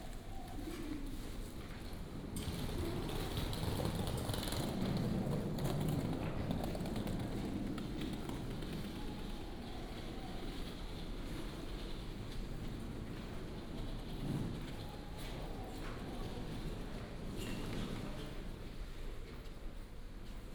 At the station platform, Station broadcast message sound, Luggage, lunar New Year, birds sound
Binaural recordings, Sony PCM D100+ Soundman OKM II